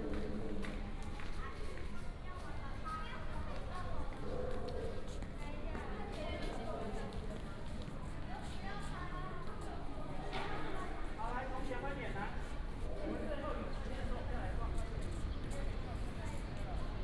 生命科學廳, National Museum of Natural Science - In the museum hall
In the museum hall, Many students